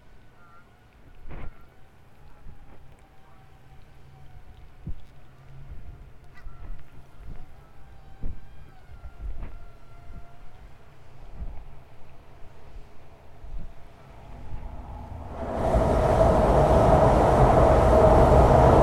Brugmanpad, Culemborg, Netherlands - Train bridge, boats on river
Train over bridge, boat transporting liquid gas, waves. Zoom H2n & 2x hydrophones